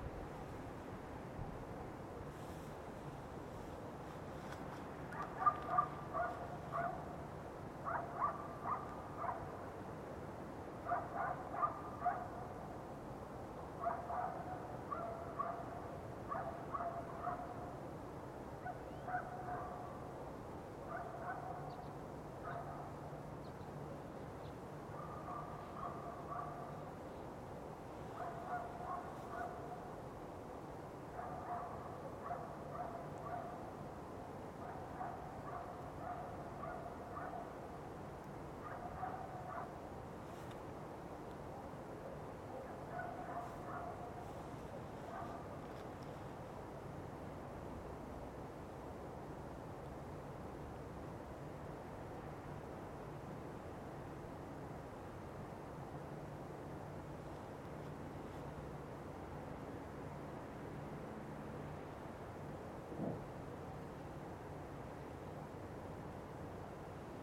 Тропа к Чертову стулу, Респ. Карелия, Россия - The Devils chair tract
The "Devil's chair" tract. You can hear the dogs barking, the forest rustling, the distant hum of the city. Day. Warm winter.